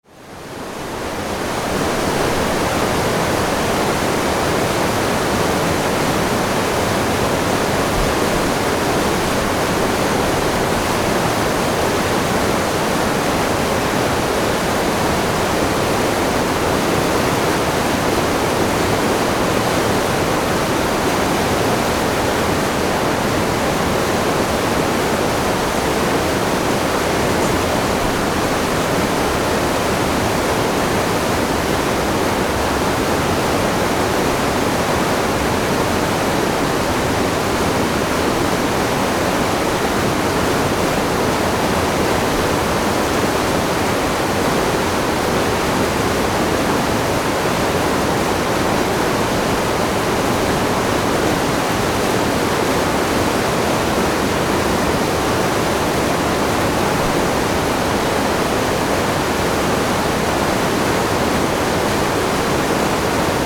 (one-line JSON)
{"title": "L'Aigle, France - La Risle à l'Aigle", "date": "2014-02-13 14:36:00", "description": "Rivière La Risle, qui coule au centre de l'Aigle", "latitude": "48.77", "longitude": "0.63", "altitude": "202", "timezone": "Europe/Paris"}